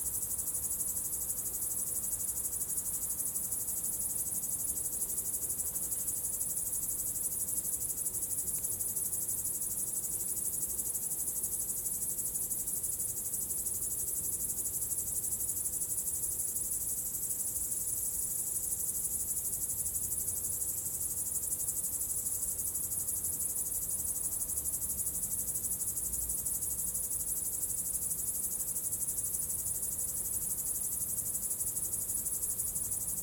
Solesmeser Str., Bad Berka, Deutschland - Binaural Sounds of Summer Nights Bad Berka
*Binaural - best listening with headphones.
This recording chronicles sounds of nature typical of summer nights juxtaposed against anthrophony. Sounds in the left and right channels exhibit acoustic energies and rhythmical textures.
In the sound: Helicopter engine, Cricket, soft winds, soft car engines in the background.
Gear: Soundman OKM with XLR and Adapter, ZOOM F4 Field Recorder.
Landkreis Weimarer Land, Thüringen, Deutschland